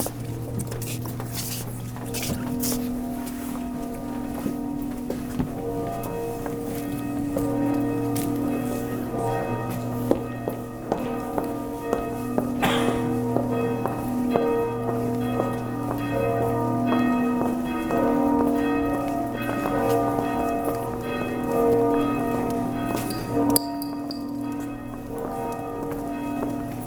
Easter procession around the old town of Ľviv, the former Polish city of Lwów, known elsewhere as Lemberg, in today’s northwestern Ukraine.